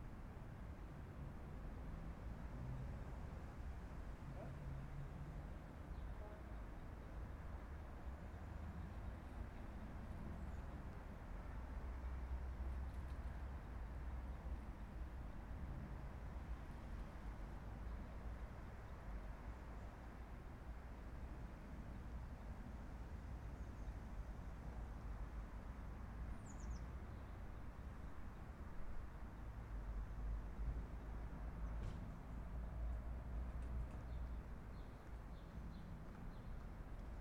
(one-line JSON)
{"title": "Ulica Moše Pijade, Maribor, Slovenia - corners for one minute", "date": "2012-08-08 15:18:00", "description": "one minute for this corner - ulica moše pijade, yard", "latitude": "46.55", "longitude": "15.64", "altitude": "281", "timezone": "Europe/Ljubljana"}